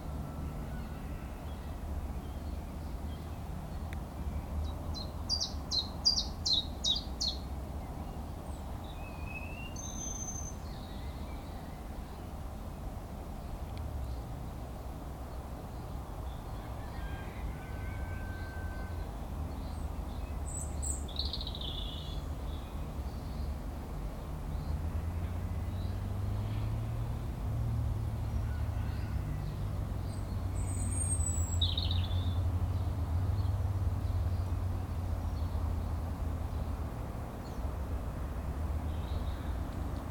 Middle Farm, Firle, East Sussex, UK - After The Picnic
After enjoying a picnic with friends in field just behind middle farm, we lay out on the grass in the late spring sunshine trying to distinguish bird calls.
Lewes, East Sussex, UK